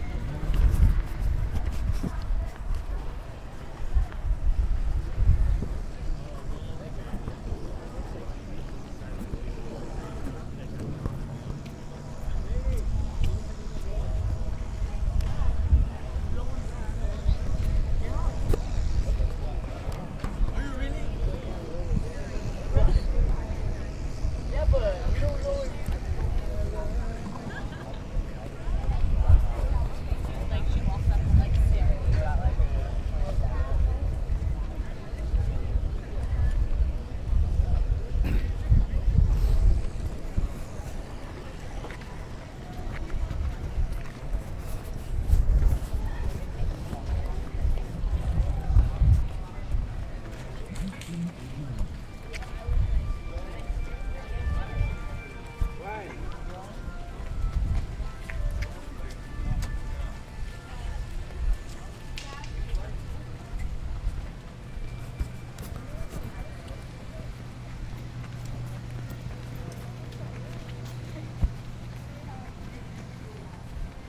UCLA Bruin Walk
Walking from Veteran Ave. to UCLAs Schoenberg Music Building.